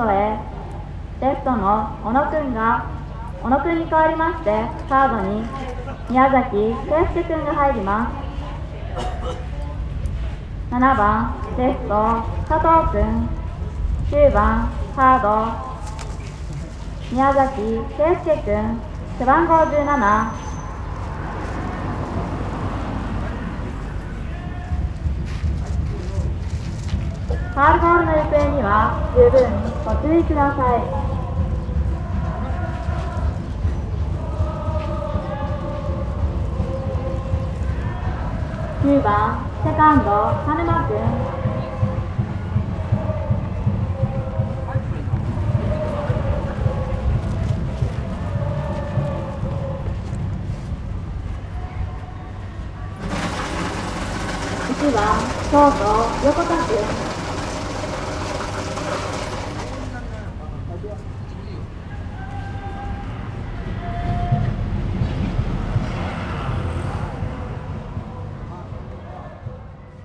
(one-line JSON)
{"title": "takasaki, baseball stadium", "date": "2010-07-22 12:00:00", "description": "female anouncement during a baseball match in the local stadium\ninternational city scapes - social ambiences and topographic field recordings", "latitude": "36.31", "longitude": "139.01", "altitude": "84", "timezone": "Asia/Tokyo"}